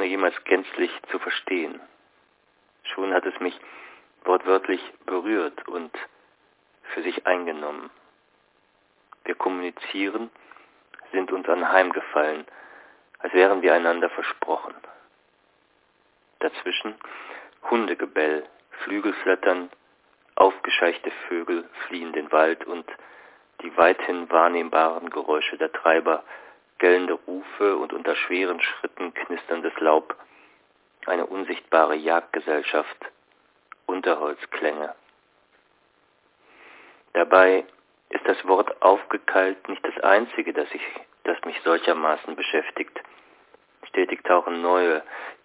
himmel/worte/land (3) - himmel worte land (3) - hsch ::: 08.05.2007 14:17:15
France